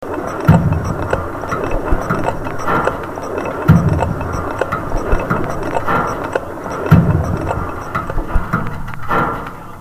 F60 Coal Mining Bridge, Conveyor Belt